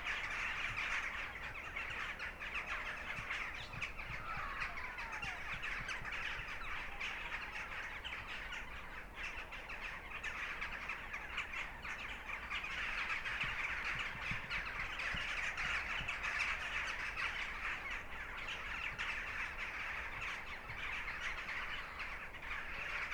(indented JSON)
{
  "title": "Vrouwvlietpad, Mechelen, België - Kauwtjes Dijkstein",
  "date": "2019-01-19 17:23:00",
  "description": "Thousands of jackdaws in the trees of castle domain Dijkstein",
  "latitude": "51.04",
  "longitude": "4.50",
  "altitude": "2",
  "timezone": "Europe/Brussels"
}